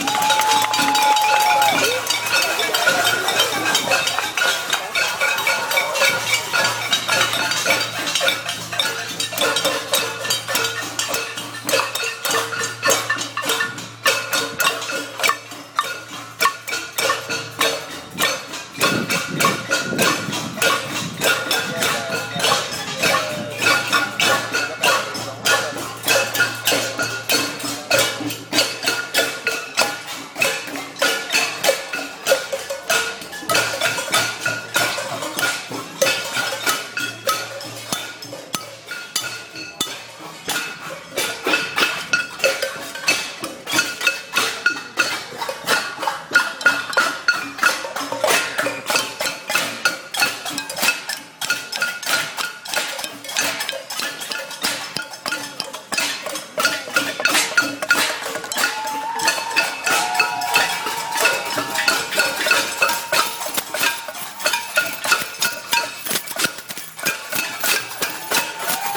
{"title": "Le Plateau-Mont-Royal, Montréal, QC, Canada - #loi78 #manifencours", "date": "2012-05-21 20:45:00", "description": "#manifencours 20:00 - 20:15 bruit contre la loi78", "latitude": "45.54", "longitude": "-73.58", "altitude": "56", "timezone": "America/Montreal"}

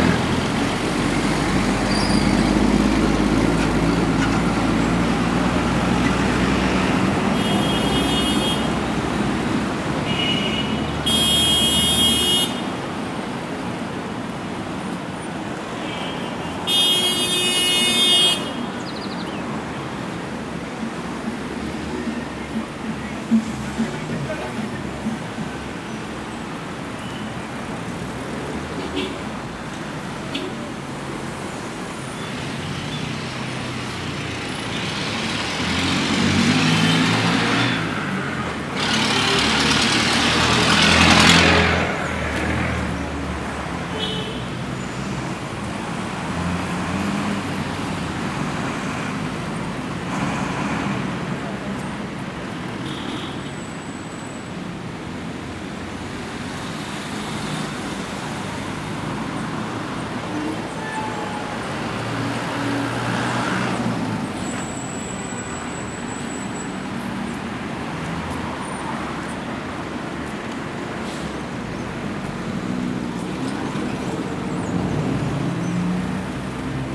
{"title": "miraflores, lima Perú", "date": "2011-07-18 17:03:00", "description": "Traveling across the principal avenue of Miraflores Lima Perú", "latitude": "-12.12", "longitude": "-77.03", "altitude": "89", "timezone": "America/Lima"}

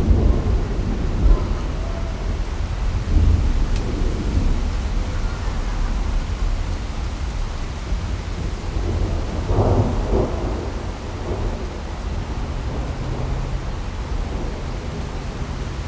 {"title": "Patty Jewet, Colorado Springs, CO, USA - Front Range Thunderstorm", "date": "2016-06-10 17:13:00", "description": "Recorded with a pair of DPA4060s and a Marantz PMD661", "latitude": "38.86", "longitude": "-104.81", "altitude": "1865", "timezone": "America/Denver"}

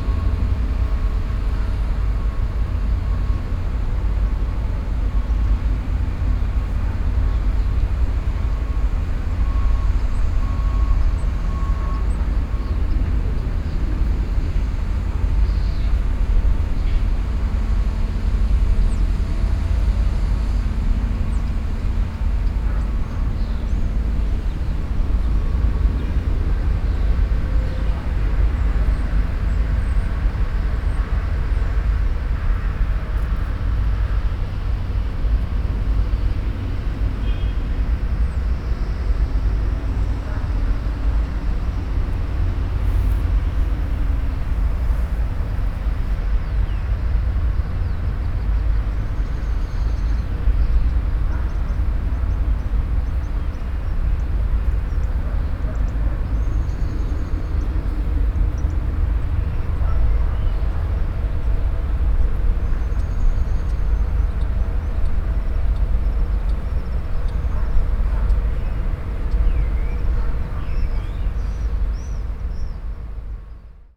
{"date": "2011-05-18 11:20:00", "description": "Brussels, Ixelles Cemetery - Cimetière dIxelles.", "latitude": "50.82", "longitude": "4.39", "timezone": "Europe/Brussels"}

